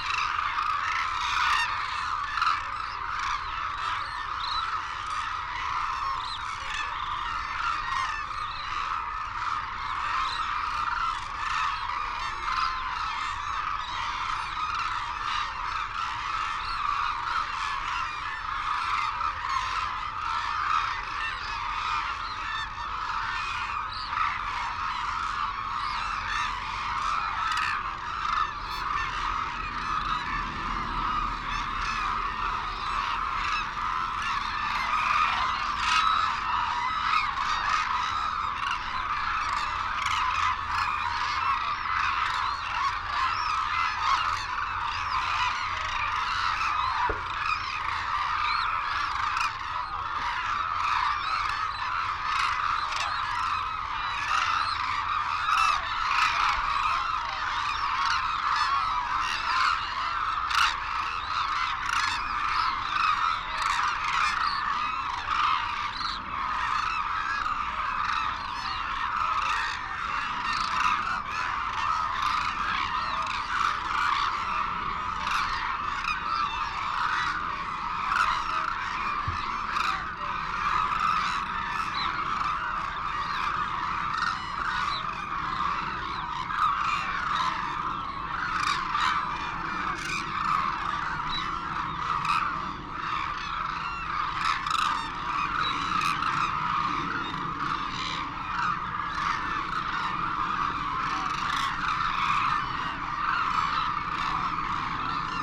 Arasaki Crane Centre ... Izumi ... calls and flight calls from white naped cranes and hooded cranes ... cold windy sunny ... Telinga ProDAT 5 to Sony minidisk ... background noise ... wheezing whistles from young birds ...